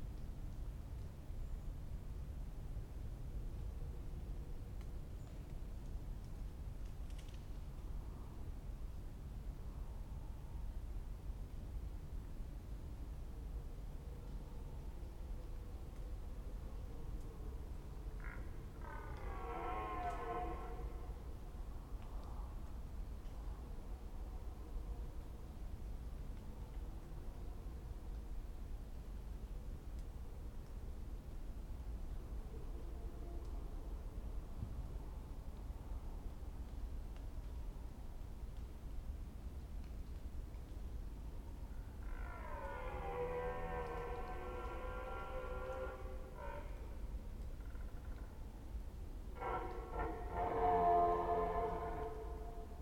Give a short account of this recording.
En Forêt de Mormal, arrivé un peu tard pour avoir également le cri des chouettes en proximité, ce brame du cerf nous est offert avec le son du clocher en lointain. Sonosax SXM2D2 DPA 4021 dans Albert ORTF sur iPhone